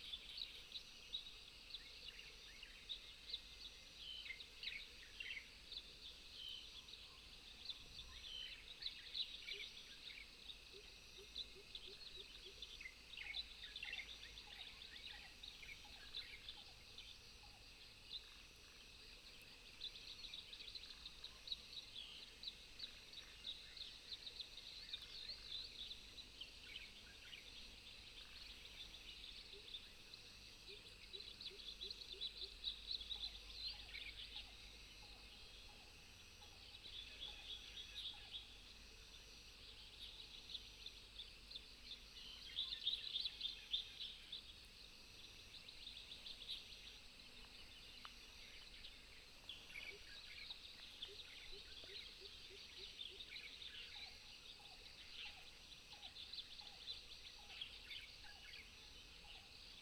桃米巷, 南投縣埔里鎮 - Birdsong
Birdsong
Binaural recordings
Sony PCM D100+ Soundman OKM II
30 April 2015, 06:27